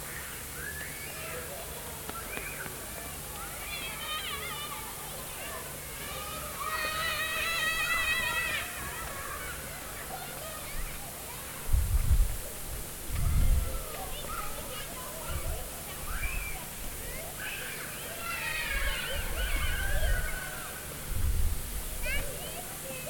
Lorenzstraße, Karlsruhe, Deutschland - Kinderstimmen im künstlichen Nebel
Fog Sculpture #10731
Karlsruhe, Germany, 9 May 2019, 09:42